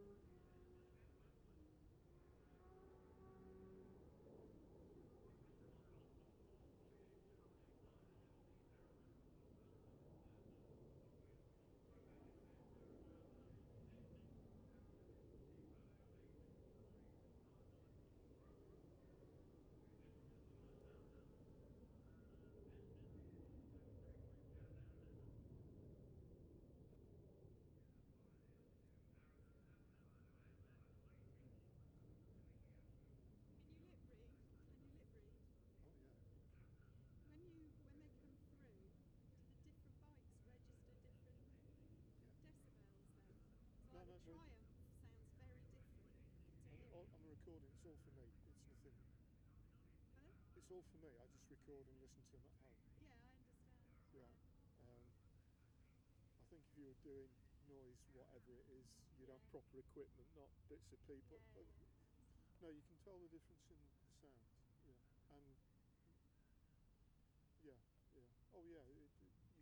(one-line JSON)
{"title": "Jacksons Ln, Scarborough, UK - Gold Cup 2020 ...", "date": "2020-09-11 15:07:00", "description": "Gold Cup 2020 ... 600 odd and 600 evens qualifying ... Memorial Out ... dpa 4060 to Zoom H5 ...", "latitude": "54.27", "longitude": "-0.41", "altitude": "144", "timezone": "Europe/London"}